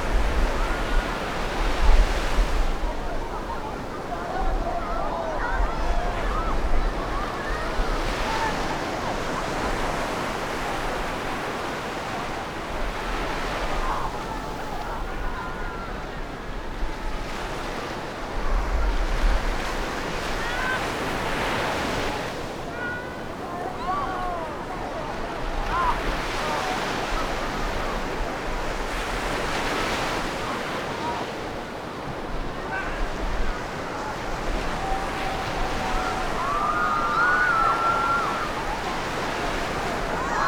Gongliao, New Taipei City - Beach
29 June 2012, 15:28